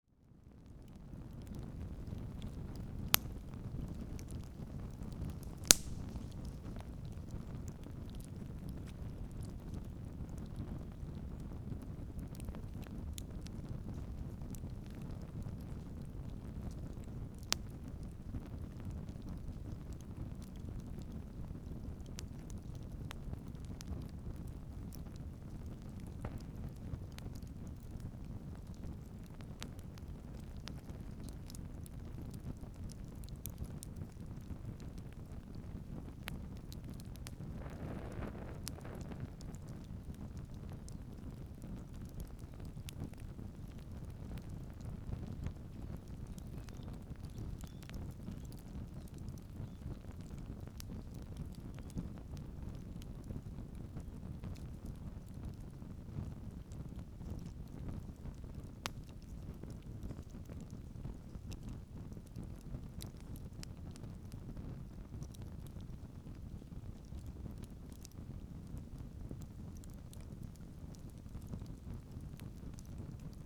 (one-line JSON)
{"title": "Lithuania, Stabulankiai, fireplace", "date": "2013-10-12 14:40:00", "description": "little fireplace at ancient heathen site", "latitude": "55.52", "longitude": "25.45", "altitude": "174", "timezone": "Europe/Vilnius"}